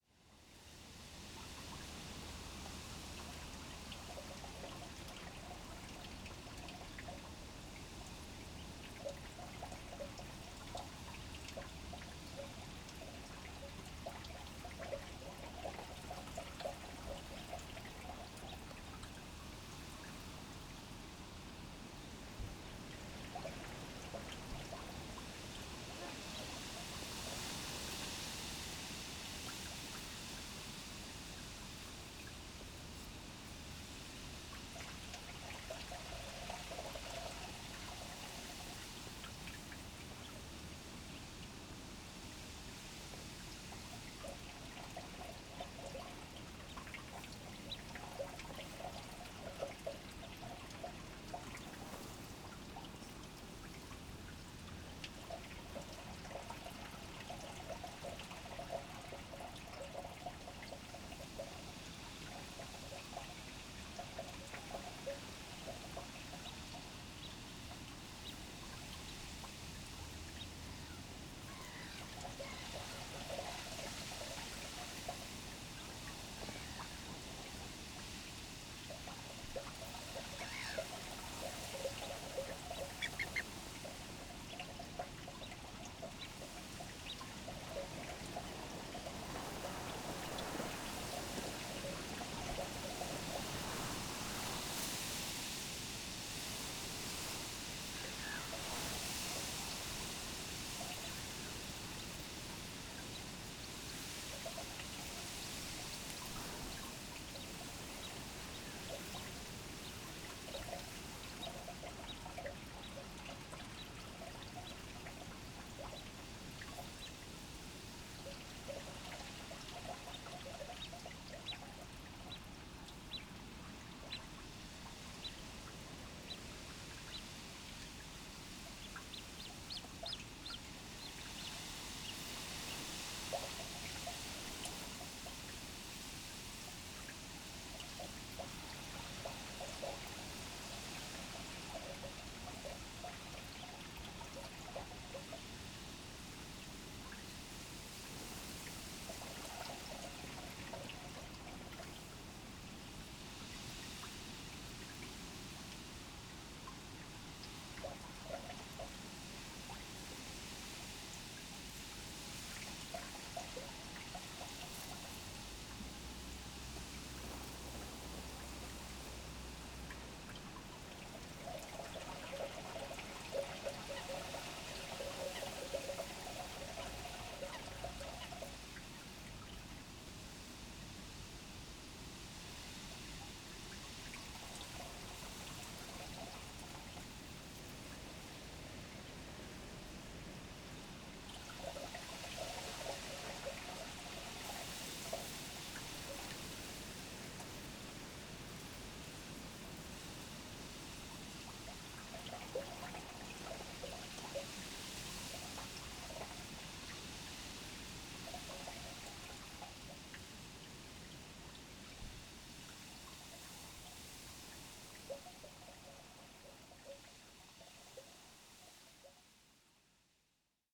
workum: lieuwe klazes leane - the city, the country & me: canal drain
canal drain, wind-blown reed, young coots and other birds
the city, the country & me: june 22, 2015